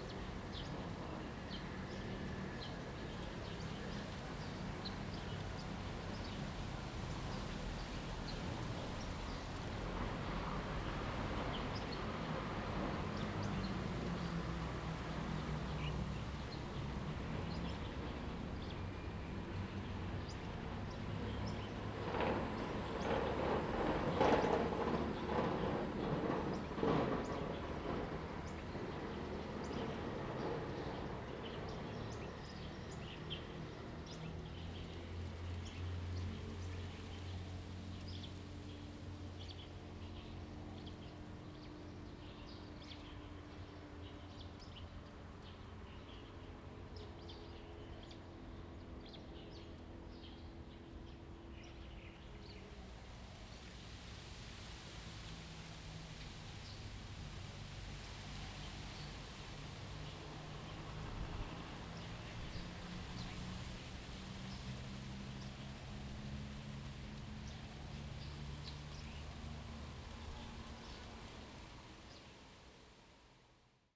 忠義廟, Lieyu Township - In the temple square
In the temple square, Birds singing, Traffic Sound
Zoom H2n MS +XY
4 November 2014, ~08:00